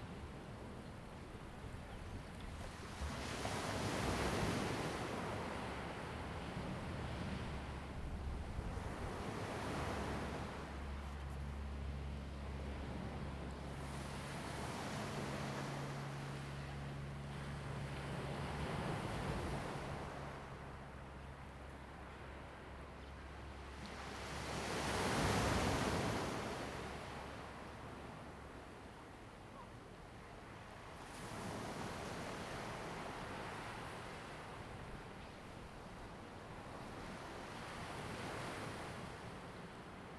Ponso no Tao, Taiwan - In the beach
sound of the waves, In the beach
Zoom H2n MS +XY